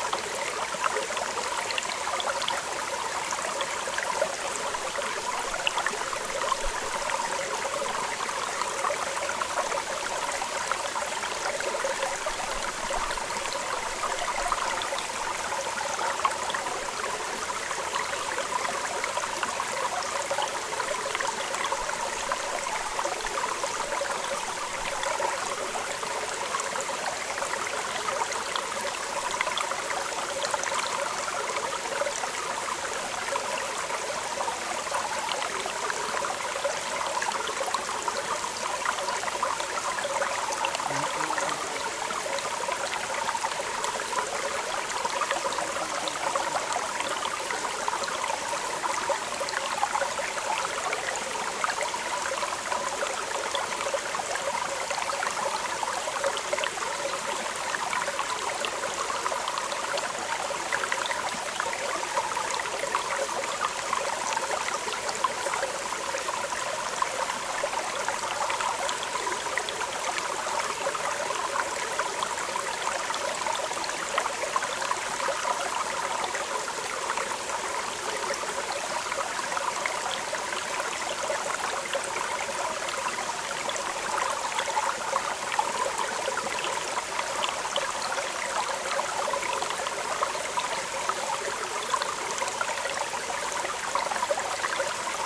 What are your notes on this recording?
On a short hike around the Mt Greylock Summit, I stopped at a little brook and made this recording, using my trusty Olympus LS-10S